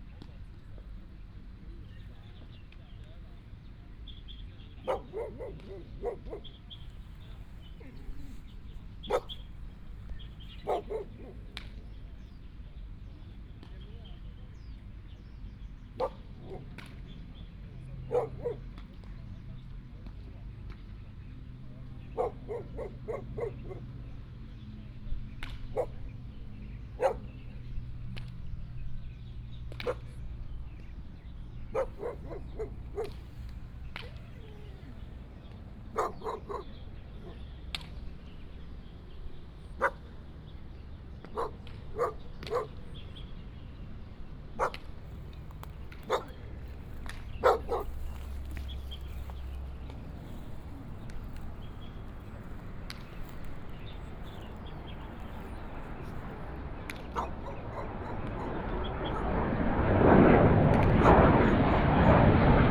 空軍廿二村, 新竹市北區 - Dog and the fighter
Dog sounds, Birds sound, The fighter took off, Playing baseball, Here was the home area of soldiers from China, Binaural recordings, Sony PCM D100+ Soundman OKM II
North District, Hsinchu City, Taiwan, 15 September 2017